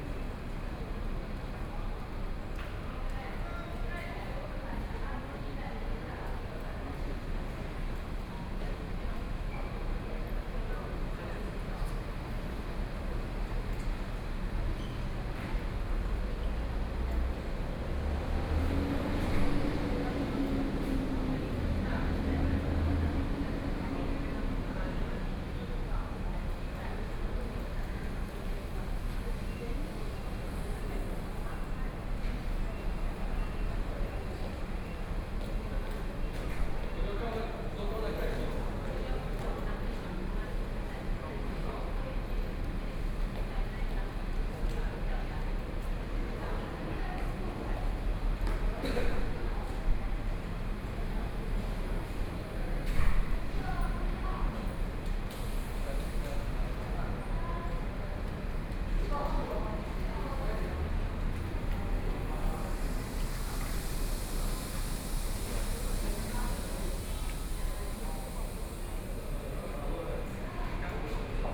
宜蘭轉運站, Yilan City - Station hall
Bus Transfer Station, Station hall
Sony PCM D50+ Soundman OKM II
宜蘭縣 (Yílán), 22 July 2014, 14:58